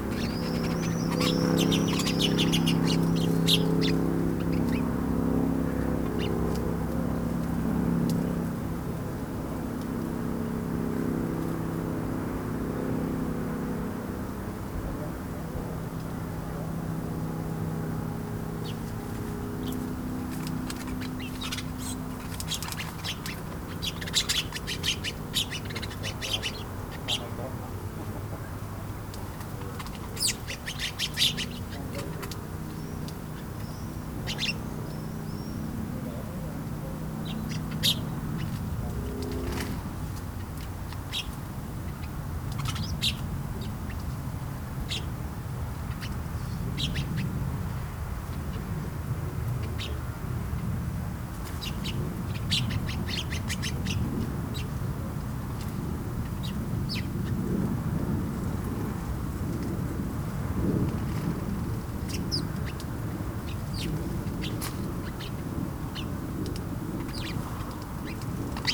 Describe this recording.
Sparrows in their bush (bed time). Workers in village street. Distant traffic noise. Passing plane. We can also hear swift calls. Moineaux dans leur buisson (à l’heure du coucher). Voix d'ouvriers dans la rue du village. Bruit de trafic lointain. Passage d’un avion. On peut aussi entendre des martinets.